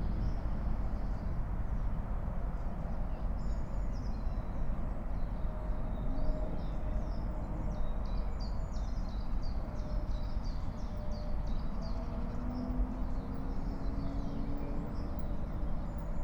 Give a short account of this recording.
19:48 Berlin Buch, Lietzengraben - wetland ambience